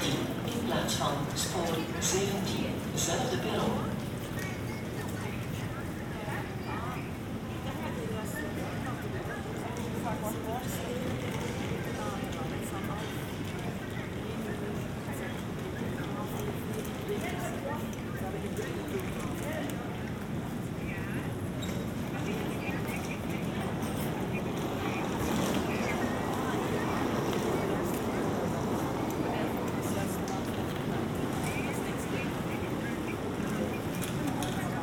Gare du Midi, Saint-Gilles, Belgique - Main hall ambience
People passing by, conversations, synthetic voices, trains passing above.
Tech Note : Ambeo Smart Headset binaural → iPhone, listen with headphones.